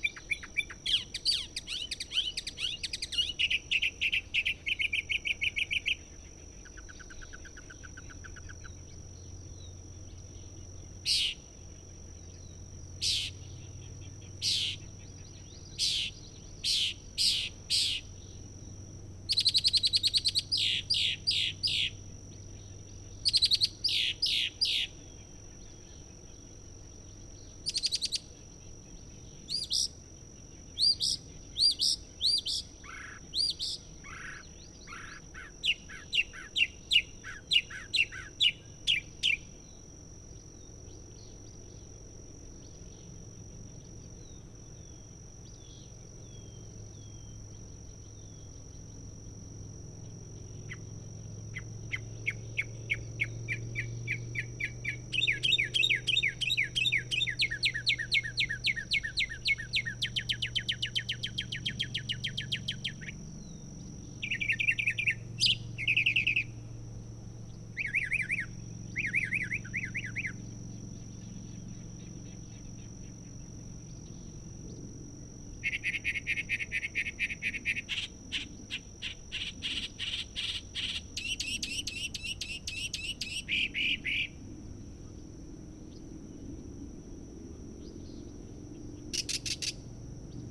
Mockingbird on a roll
fostex fr2le and at3032 omnis